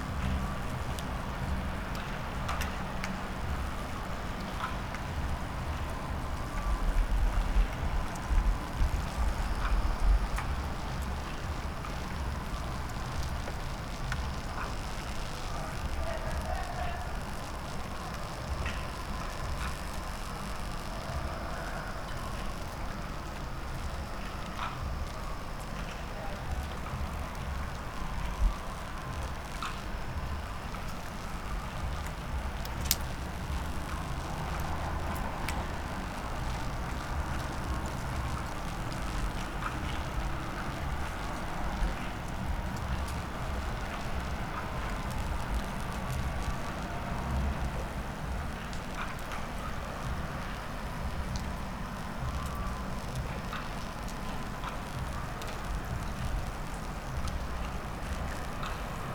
as soon as the air gets damp the wiring at the high voltage pylon starts to sizzle and crackle.
Poznan, Poland, 16 December, 02:00